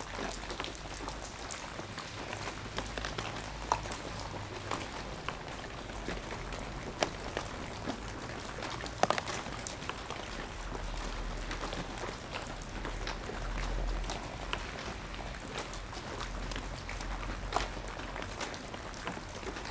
Mont-Saint-Guibert, Belgique - Very bad weather
A very bad weather in an abandoned factory. Microphones are hidden in a mountain of dusts and it's raining raining raining...
Mont-Saint-Guibert, Belgium, 20 February 2016, ~22:00